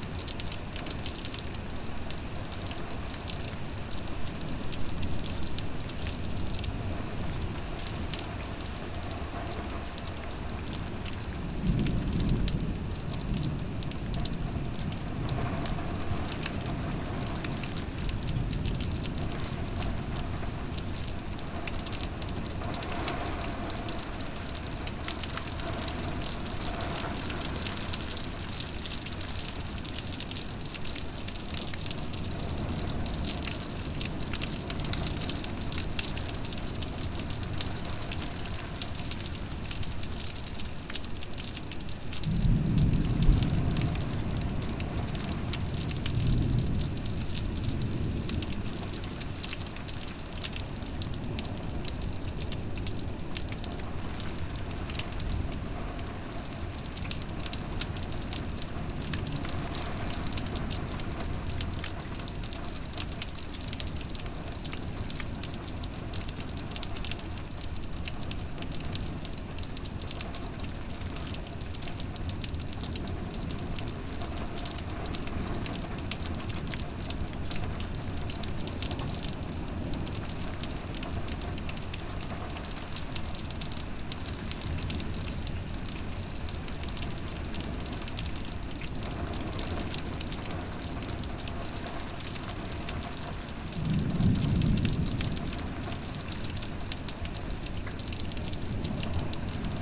Mont-Saint-Guibert, Belgique - Thunderstorm
A terrible thunderstorm ravage the Brabant-Wallon district. 30 Liters fall down in 10 minutes. In the nearby city of Court-St-Etienne, 300 houses were devastated. On 14:42, the thunderbolt is very near.
Mont-Saint-Guibert, Belgium, 23 June 2016